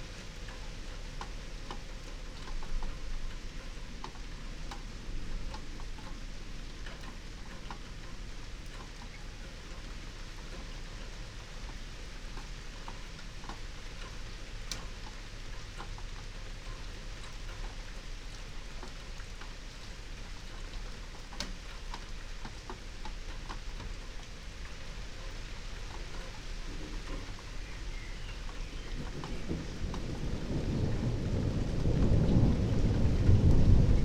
15:01 Berlin Bürknerstr., backyard window - Hinterhof / backyard ambience
Berlin, Germany, 1 June 2022, ~15:00